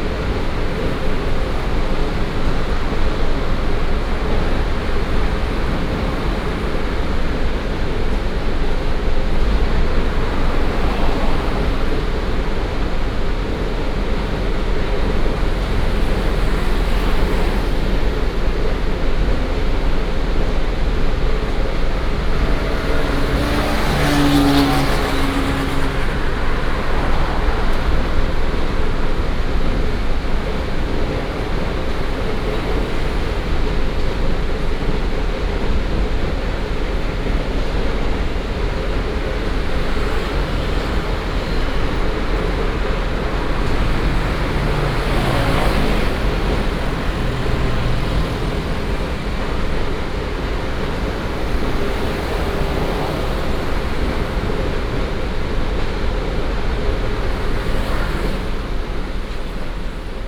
太平區太堤東路99號, Taichung City - Next to the gravel yard
Next to the gravel yard, Traffic sound, Sand treatment plant, Binaural recordings, Sony PCM D100+ Soundman OKM II
Taiping District, Taichung City, Taiwan, 1 November, 17:04